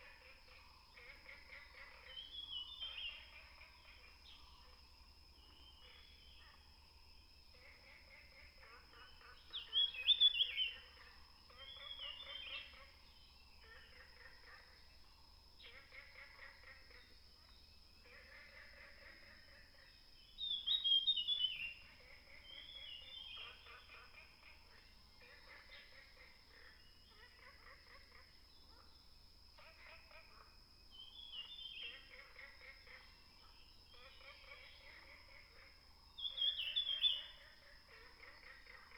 華龍巷, 五城村, Yuchi Township - Frogs chirping and Birds singing
Frogs chirping, Birds singing, in the woods